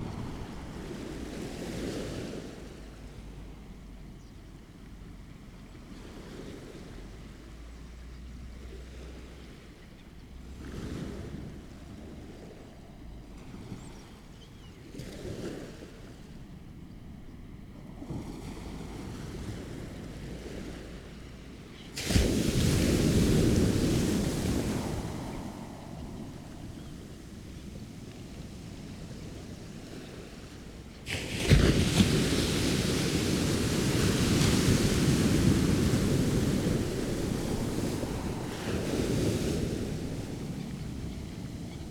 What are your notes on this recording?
Amble ... tide coming in ... early on a lady berates her dog for rolling on a dead seal ... waves hitting banks of sea weed ... bird calls from rock pipit ... black-headed gull ... starling ... redshank ... turnstone ... lavalier mics clipped to T bar on mini tripod ...